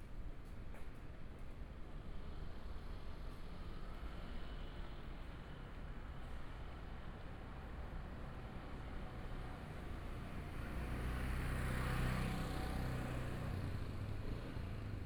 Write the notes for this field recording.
Environmental sounds, Motorcycle sound, Traffic Sound, Binaural recordings, Zoom H4n+ Soundman OKM II